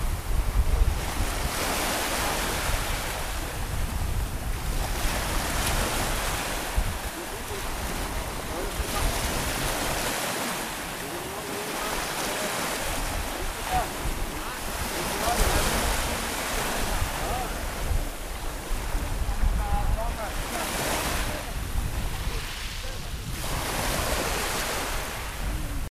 Anholt, Danmark - Waves
The recording is made by the children of Anholt School and is part of a sound exchange project with the school in Niaqornat, Greenland. It was recorded using a Zoom Q2HD with a windscreen.